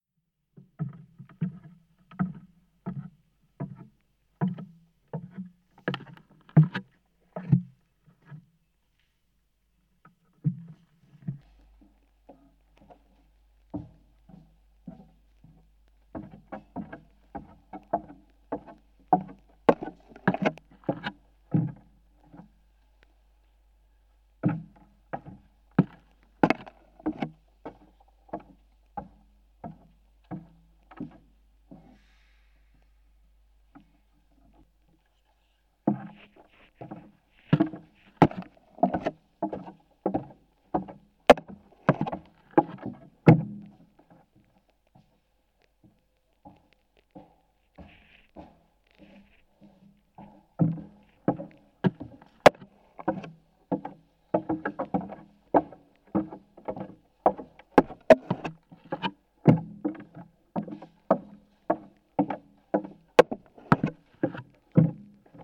Cerezales del Condado, León, España - 2015-06-13 Auditorio de Cerezales del condado: escaleras
Escalera de madera del edificio del futuro Auditorio de la Fundación Cerezales. Micrófonos de contacto, pasos, objetos metálicos